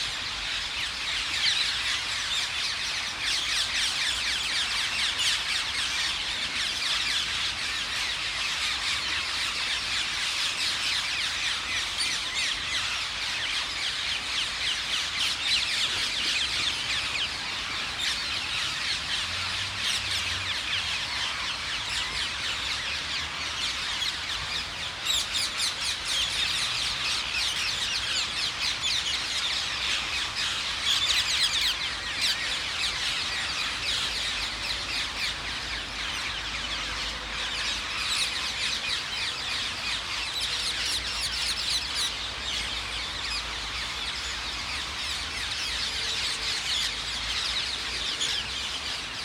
Garden of Remembrance, London Borough of Lewisham, London, UK - Ring-necked Parakeet Roost unusually without overhead planes
The intense sound of the thousands strong parakeet roost is usually mixed with planes en route to Heathrow Airport. This recording is during a rare gap between the aircraft.